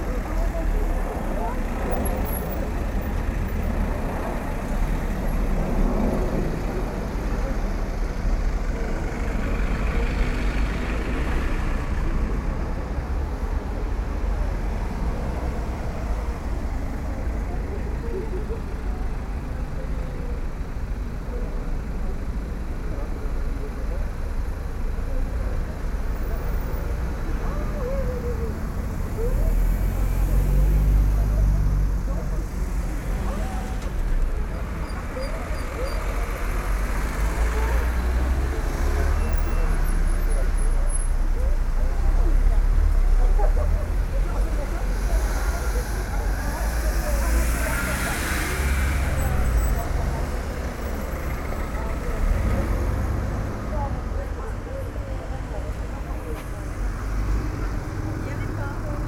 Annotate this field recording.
I recorded this with a not so good Edirol from University. Car sounds, people talking, airplanes passing by and other sounds. It was a Saturday afternoon.